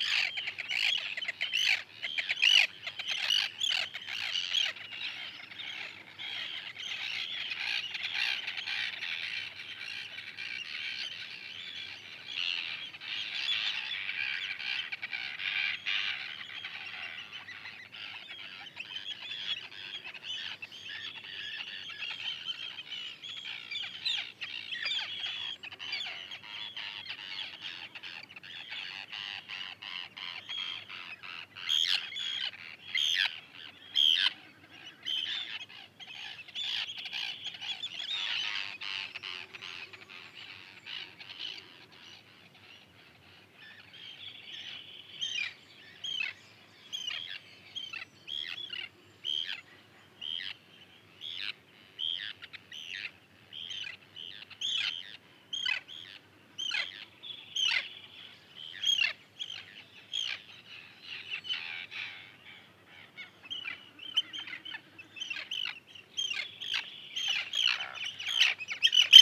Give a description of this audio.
Local Birds, Summer, Morning time